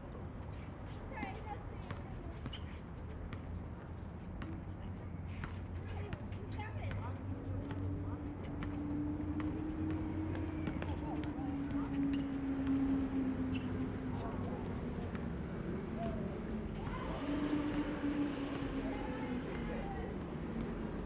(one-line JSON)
{"title": "Norma Triangle, West Hollywood, Kalifornien, USA - afternoon basketball", "date": "2014-01-06 15:08:00", "description": "park opposite of the pacific design centre, north san vicente boulevard, west hollywood, early afternoon; children playing basketball; distant trafic;", "latitude": "34.08", "longitude": "-118.38", "altitude": "69", "timezone": "America/Los_Angeles"}